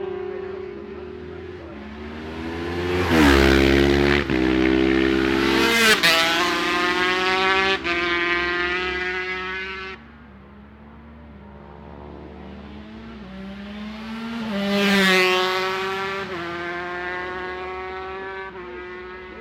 Barry Sheene Classic Races 2009 ... 400 race with 125 ... 250 ... 400 ... one point stereo mic to minidisk ...